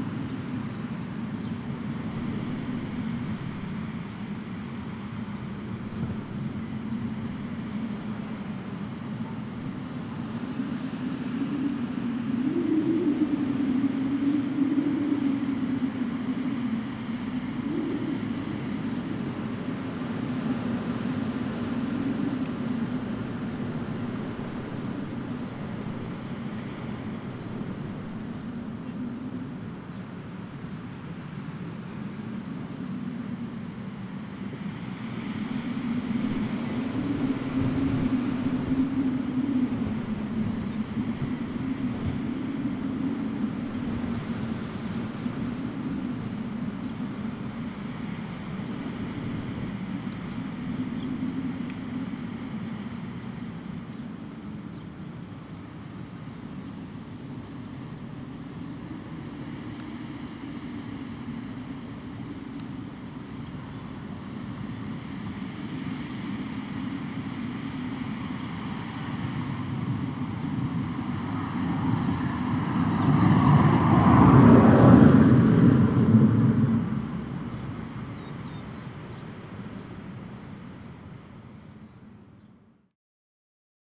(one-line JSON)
{"title": "Trachilos, Crete, wind in electric wires", "date": "2019-04-30 13:20:00", "description": "the day was windy and there's kind of aeolian harp in the wires....", "latitude": "35.51", "longitude": "23.63", "altitude": "2", "timezone": "Europe/Athens"}